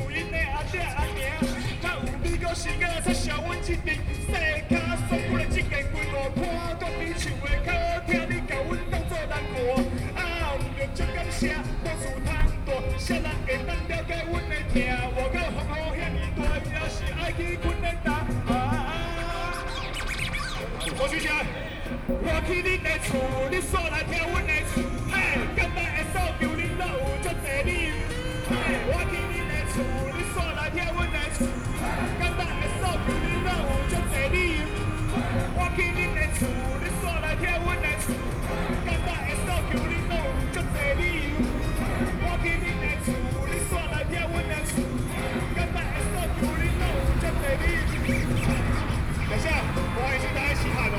Taipei - Anti-Nuclear Power
anti–nuclear power, in front of the Plaza, Broadcast sound and traffic noise, Sony PCM D50 + Soundman OKM II